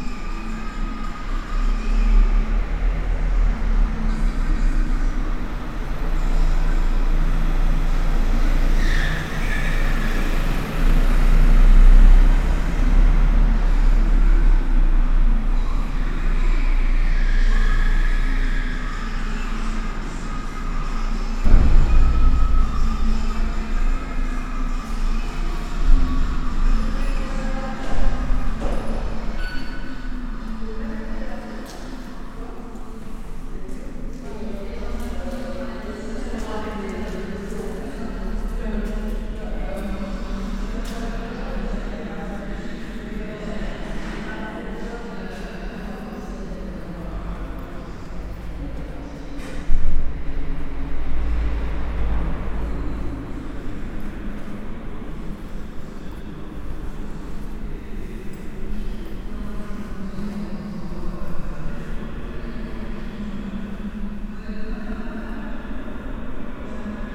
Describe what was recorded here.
Bad weather, alone in the city this evening. I took a walk into the numerous underground parkings of the Louvain-La-Neuve city. The -3 floor is completely desert, no cars but a lot of hideous vandalism. Its a good time to describe a very creepy atmosphere.